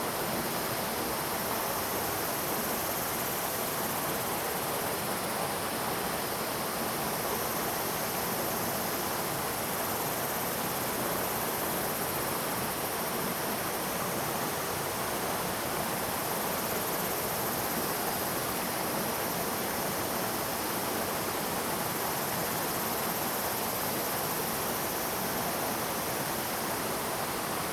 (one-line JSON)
{"title": "Yumean Gate, 埔里鎮成功里 - River Sound", "date": "2016-05-18 13:44:00", "description": "River Sound\nZoom H2n MS+XY", "latitude": "23.96", "longitude": "120.89", "altitude": "435", "timezone": "Asia/Taipei"}